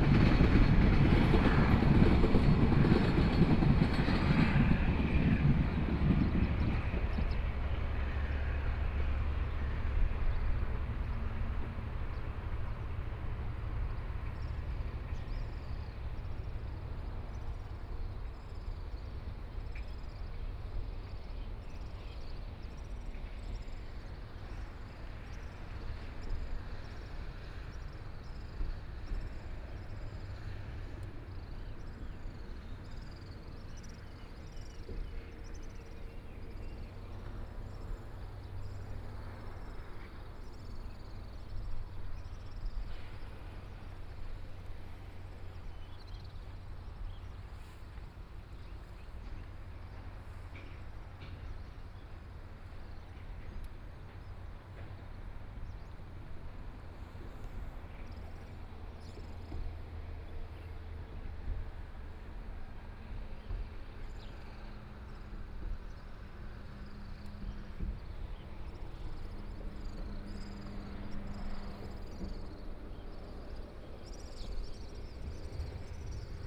金崙村, Taimali Township - the embankment
Next to the embankment .Traffic Sound, The distant sound of train traveling through